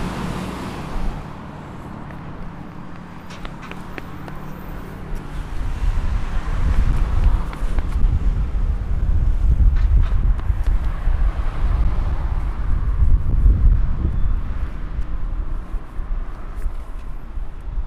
Redheugh Bridge/Scotswood Rd, Newcastle upon Tyne, UK - Redheugh Bridge/Scotswood Road, Newcastle upon Tyne
Redheugh Bridge/Scotswood Road.
6 May 2017, 11:37